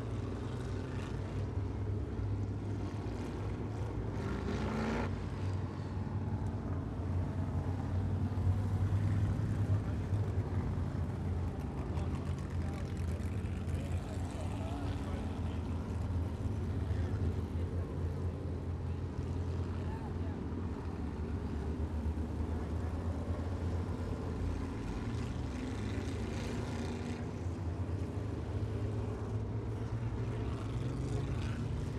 Hudson Speedway - Supermodified Practice
Practice for the SMAC 350 Supermodifieds at Hudson Speedway